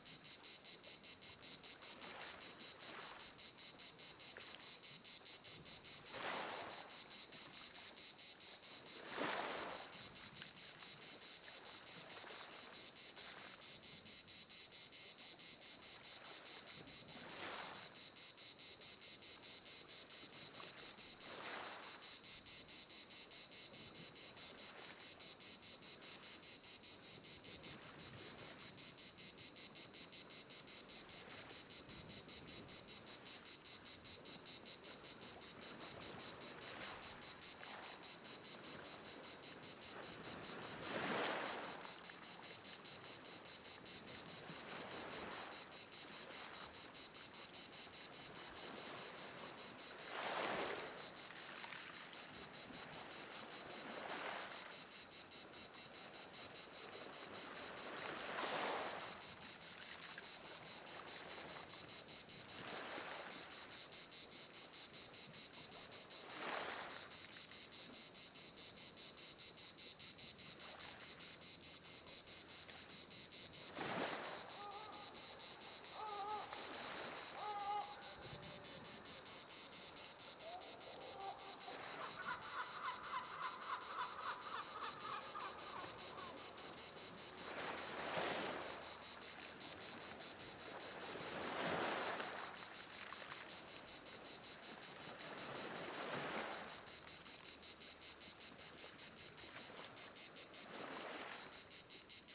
Marseille, France - Marseille Veyre - calanque - ambiance
Marseille
Parc National des Calanques de Marseille-Veyre
Ambiance
Provence-Alpes-Côte dAzur, France métropolitaine, France, 21 August 2021